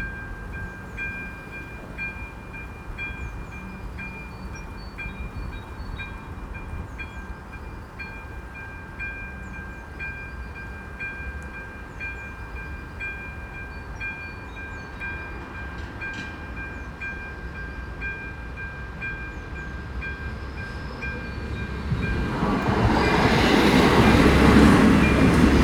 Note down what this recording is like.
Trains stop at Braník Station 4 times per hour - not so often. But on every occasion they are accompanied by the level crossing bell ringing when the barriers descend to stop the traffic. They stop ringing immediately after the train has passed. Traffic starts again.